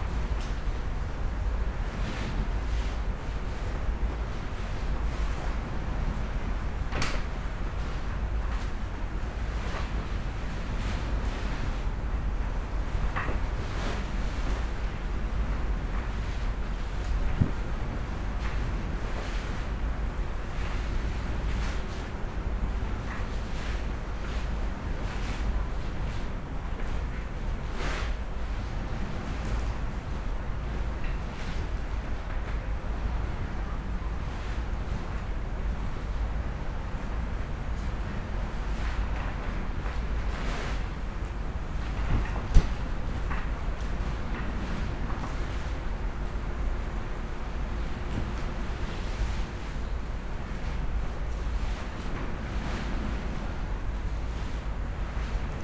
Schillig, Wangerland - wind and sea in a tent
empty tent at the beach, wind and sea sounds heard within
(Sony PCM D50, DPA4060)
Wangerland, Germany, 13 September 2014, 16:50